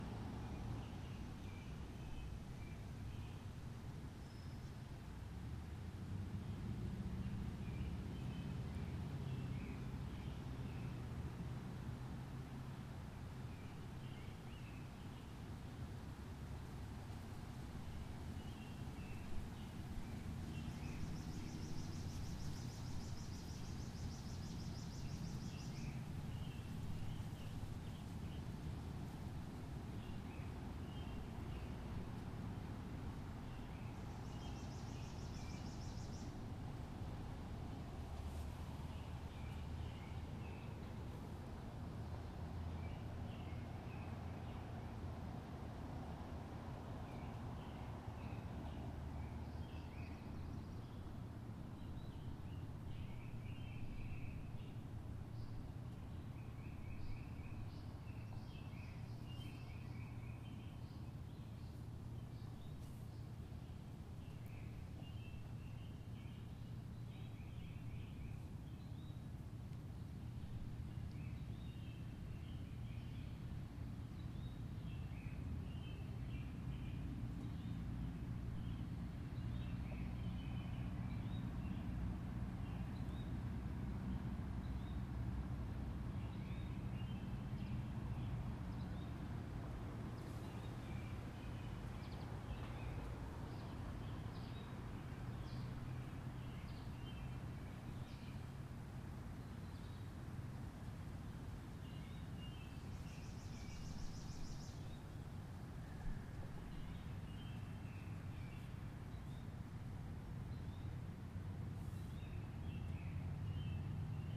Glendale Cemetery North
Recorded on Mother's Day, Sunday, May 14, 2017 at 1:50pm. It was windy, and the sound was edited lightly to remove exceptionally loud wind noise. The sound was recorded using a Zoom Q3HD Handy Video Recorder and Flip mini tripod that was set on the ground. I sat under trees in order to try to capture the rustling of leaves, which can be heard toward the end of the recording.